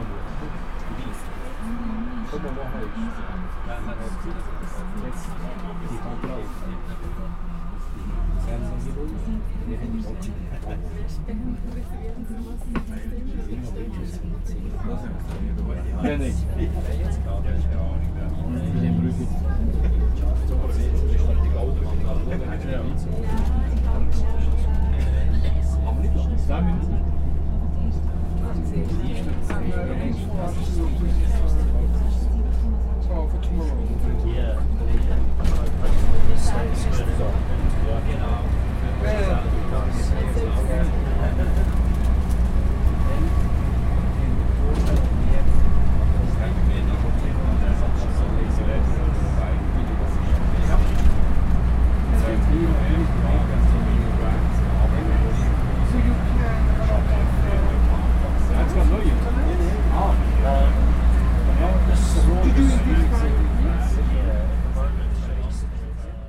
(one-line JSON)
{"date": "2011-07-08 17:05:00", "description": "Postauto nach Wyler im lötschental zum Umsteigen in die Seilbahn. es wird immer Rucksackiger und Bergschuhiger", "latitude": "46.38", "longitude": "7.75", "timezone": "Europe/Zurich"}